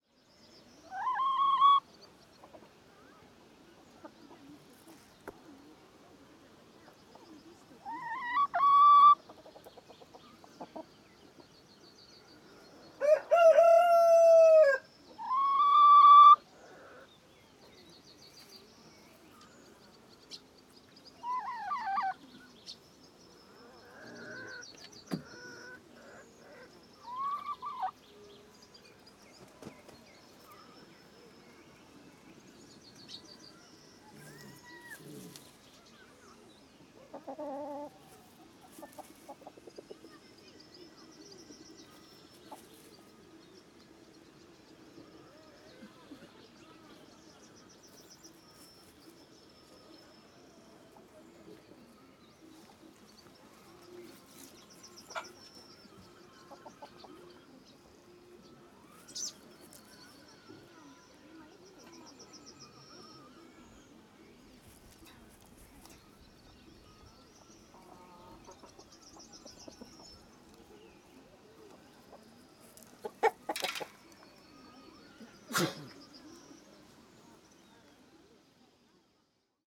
Võnnu Parish, Tartu County, Estonia - Animal farm, chickens
visiting the animal farm with the kids